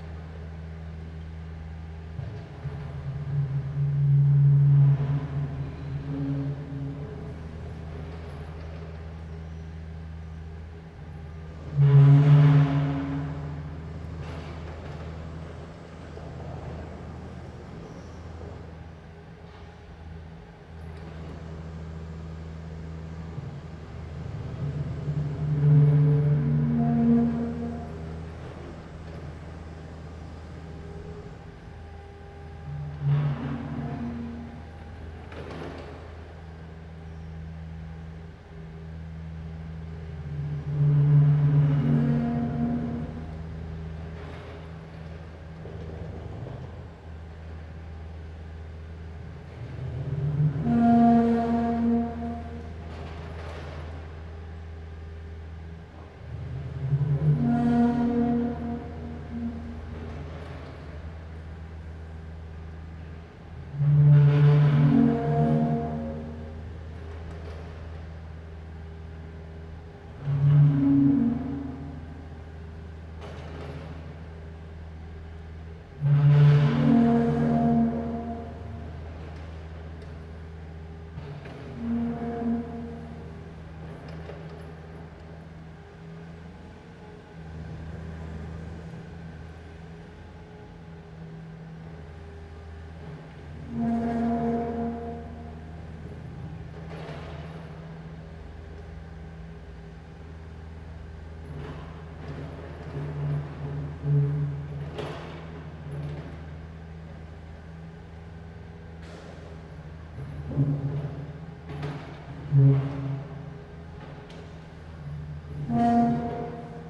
Rue de Laeken, Brussel, Belgium - Deconstruction site and bells
Chantier, cloches à midi.
Tech Note : Sony PCM-D100 wide position from a window at the 2nd floor.
Région de Bruxelles-Capitale - Brussels Hoofdstedelijk Gewest, België / Belgique / Belgien